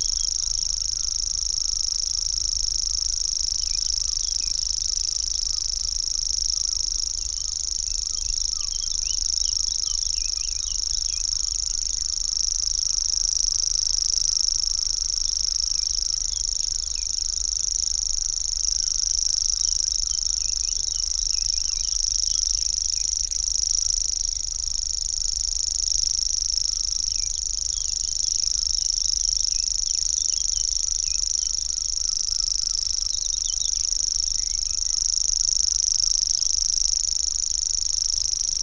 Cliff Ln, Bridlington, UK - grasshopper warbler ... in gannet territory ...
Grasshopper warbler ... in gannet territory ... mics in a SASS ... bird song ... calls from ... pheasant ... wood pigeon ... herring gull ... blackcap ... jackdaw ... whitethroat ... gannet ... tree sparrow ... carrion crow ... reed bunting ... some background noise ...